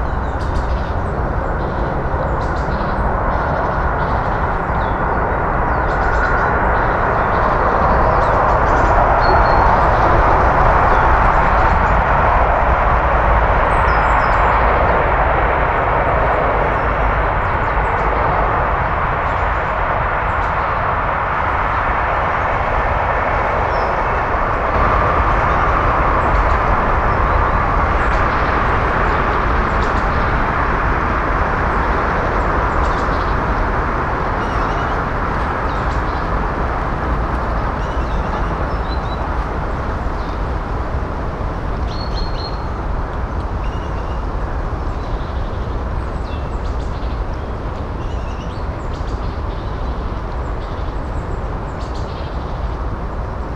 erkrath, neandertal, birkenhain
mittags im birkenhain, abseits des weges, plötzlich die vorbeifahrt der enfernten sbahnlinie
soundmap nrw:
social ambiences/ listen to the people - in & outdoor nearfield recordings